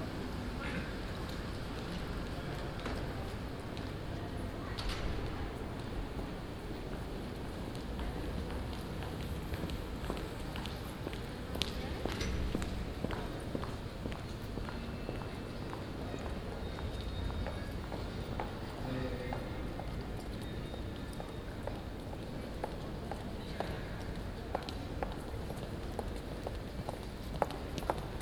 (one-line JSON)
{"title": "City Hall, Spui, Den Haag, Nederland - Atrium City Hall", "date": "2015-03-04 16:26:00", "description": "Atrium City Hall in The Hague. People waiting in que. Employees leaving the building.\nRecorded with a Zoom H2 with additional Sound Professionals SP-TFB-2 binaural microphones.", "latitude": "52.08", "longitude": "4.32", "altitude": "9", "timezone": "Europe/Amsterdam"}